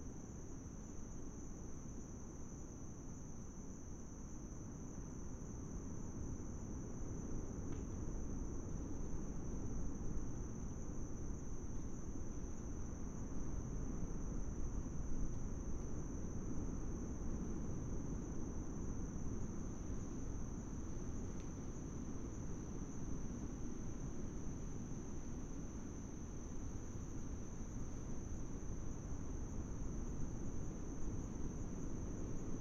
Coastal Forest, Atiu Cookinseln - Coastal Rain Forest at night, no rain.
Soundscape of the coastal rainforest at night. The forest is unique on Atiu with a lush vegetation and a closed canopy. The squealing calls in the recording are from a chattering Kingfisher, a bird species endemic to the Cook Islands. Otherwise there is a host of insects, twigs and leaves cracking and/or falling and of course in the background the ever present roar of waves on the outer reef. Recorded with a Sound Devices 702 field recorder and a modified Crown - SASS setup incorporating two Sennheiser mkh 20 microphones.